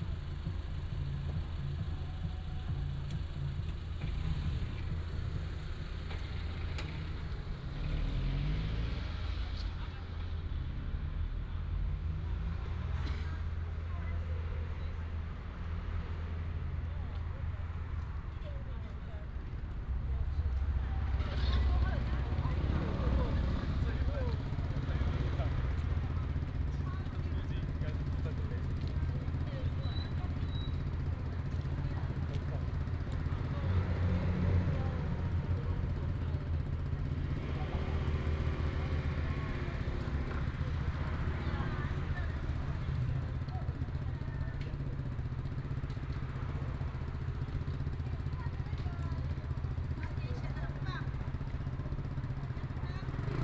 Zhuifen St., Dadu Dist. - Traffic sound
Traffic sound, A small square outside the station
Dadu District, Taichung City, Taiwan